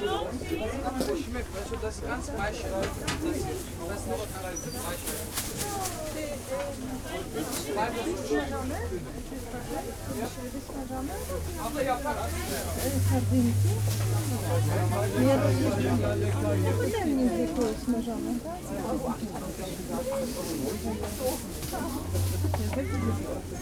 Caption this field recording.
a walk around the market, the city, the country & me: november 19, 2010